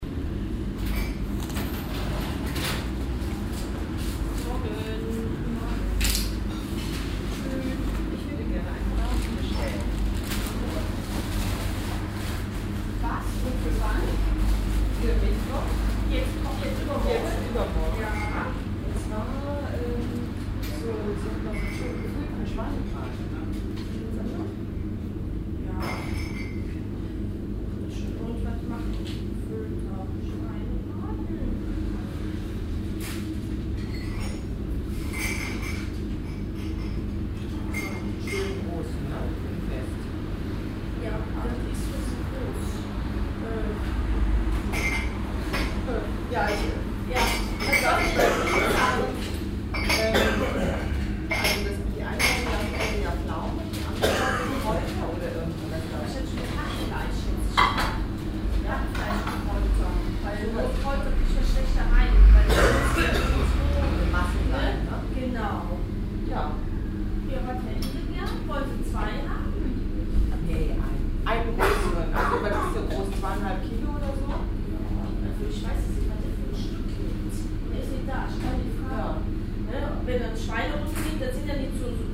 soundmap: köln/ nrw
brummen von kühlaggregaten, kundengespräch und kundenhusten, morgens
project: social ambiences/ listen to the people - in & outdoor nearfield recordings